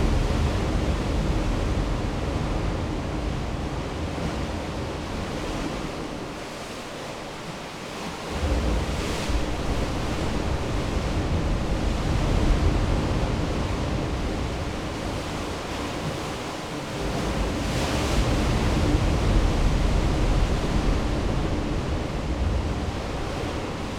near Kilchiaran Bay on the west coast of Islay is a wonderful blow hole. This recording is an extract from a recording made in a narrow fissure a couple of metres from the main blow hole. I suspended a pair of microphones (omnis based of Primo capsules) fixed to a coat hanger (thanks Chris Watson) into the cavern by about 2 metres recording to an Olympus LS 14.